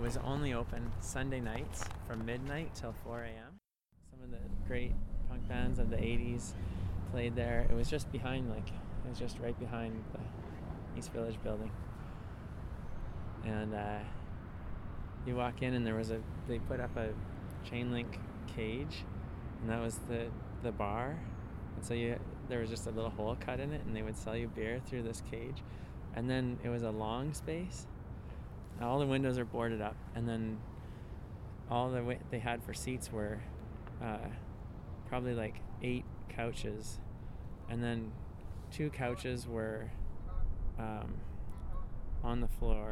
East Village, Calgary, AB, Canada - Punk Bars of the 80s
“This is my Village” explores narratives associated with sites and processes of uneven spatial development in the East Village and environs. The recorded conversations consider the historical and future potential of the site, in relation to the larger development of the East Village in the city.